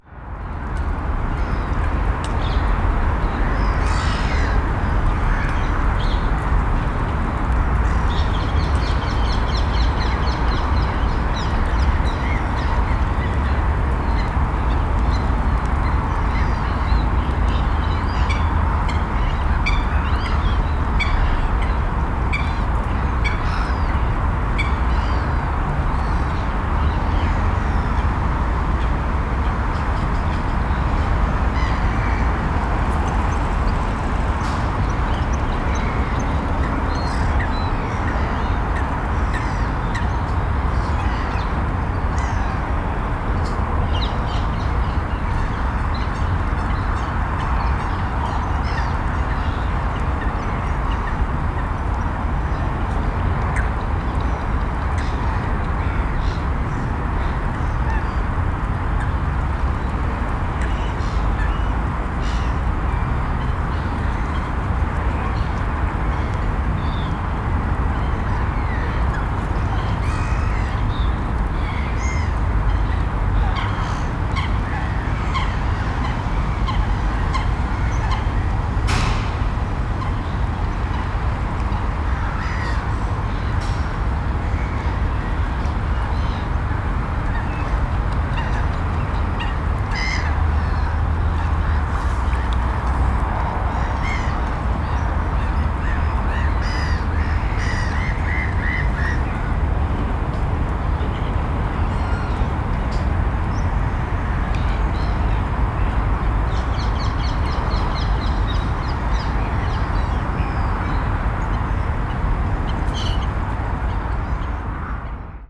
Thamesmead, UK - Southmere 2
Recorded with a stereo pair of DPA 4060s and a Marantz PMD 661